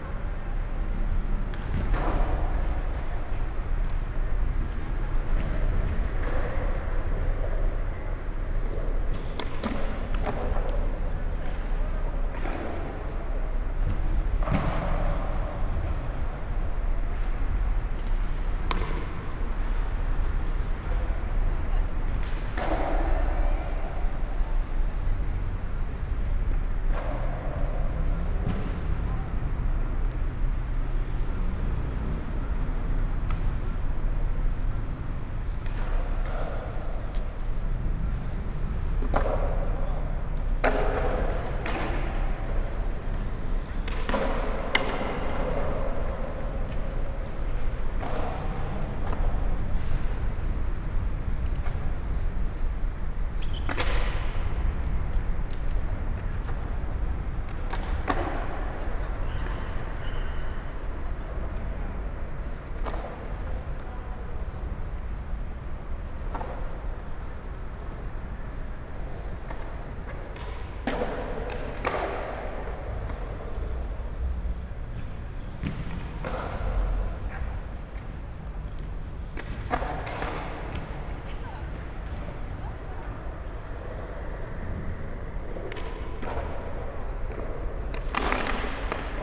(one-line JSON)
{"title": "Zoobrücke, Deutz, Cologne, Germany - skaters, BMX biker, traffic drone", "date": "2016-08-30 20:15:00", "description": "Köln Deutz, under the bridge (Zoobrücke), listening to skaters, bikers and deep drone of the traffic above\n(Sony PCM D50, Primo EM172)", "latitude": "50.95", "longitude": "6.98", "altitude": "39", "timezone": "Europe/Berlin"}